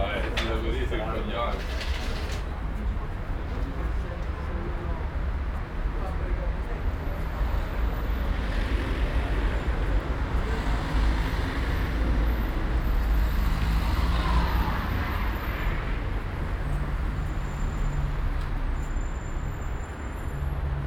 Ascolto il tuo cuore, città. I listen to your heart, city. Several chapters **SCROLL DOWN FOR ALL RECORDINGS** - It’s five o’clock on Saturday with bells in the time of COVID19: Soundwalk
"It’s five o’clock on Saturday with bells in the time of COVID19": Soundwalk
Chapter CXXXI of Ascolto il tuo cuore, città. I listen to your heart, city
Saturday, February 13th, 2021. San Salvario district Turin, walking to Corso Vittorio Emanuele II, then Porta Nuova railway station and back.
More than three months of new restrictive disposition due to the epidemic of COVID19.
Start at 4:55 p.m. end at 5:36 p.m. duration of recording 40’53”
The entire path is associated with a synchronized GPS track recorded in the (kmz, kml, gpx) files downloadable here: